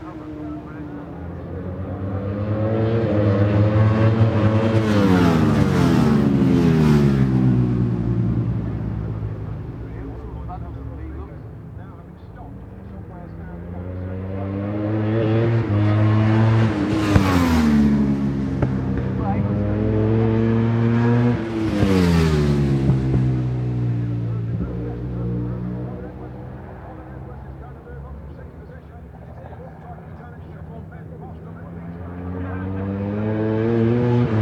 Brands Hatch GP Circuit, West Kingsdown, Longfield, UK - World Superbikes 2001 ... superbikes ...
World Superbikes 2001 ... Qualifying ... part two ... one point stereo mic to minidisk ...